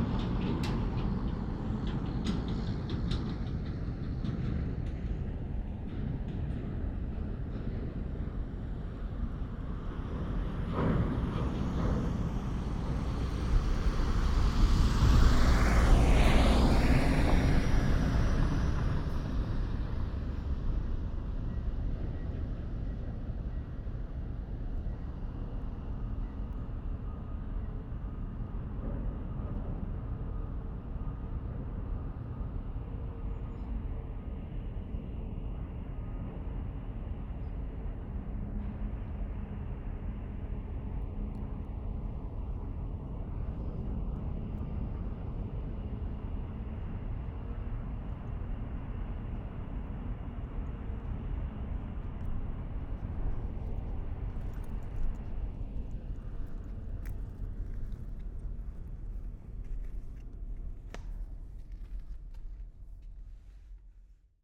Maasvlakte Rotterdam, Netherlands - Maasvlakte containers
Container terminal at the port. Soundfield ST350, stereo decode.
Zuid-Holland, Nederland, 28 December 2014